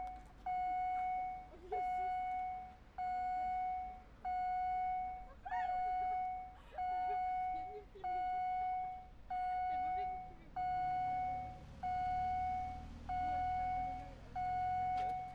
Poznan, Strozynskiego street. - fright train crossing
a long fright train passes quickly. recording right at the barrier. a group of students waiting for the train to pass. after the barrier opened there were some glitchy noises coming from the mechanism. you can hear them around 1:45 - 1:50. (sony d50)
Poznań, Poland